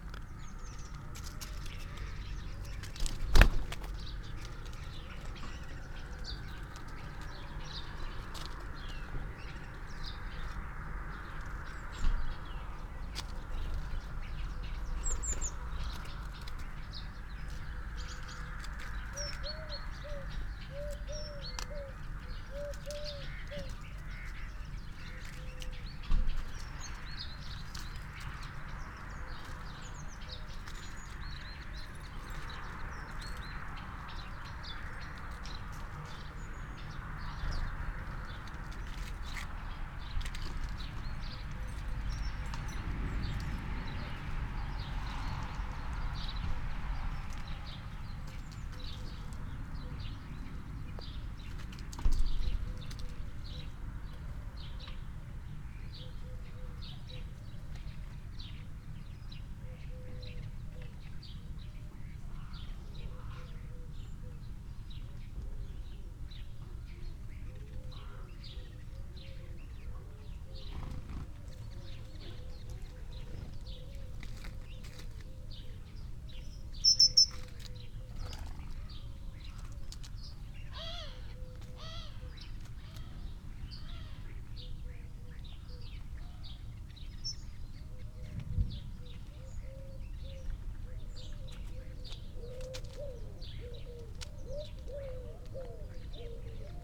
Luttons, UK - bird feeder soundscape ...
bird feeder soundscape ... SASS ... bird calls from ... robin ... house sparrow ... starling ... collared dove ... crow ... great tit ... blue tit ...wood pigeon ... dunnock ... rook ... background noise ...
Malton, UK